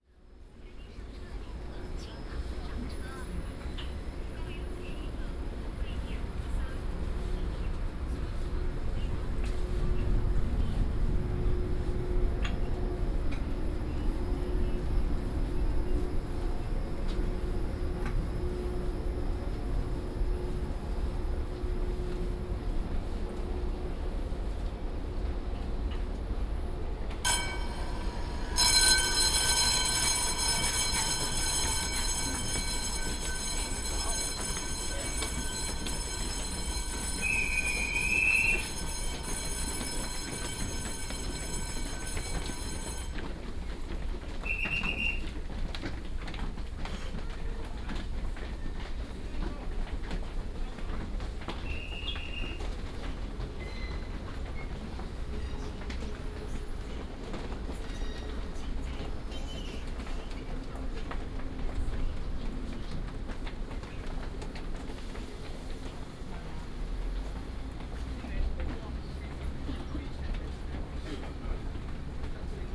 Hsinchu Station, Hsinchu City - Walking in the station
From the station platform, Through the underground road, Walk to the station exit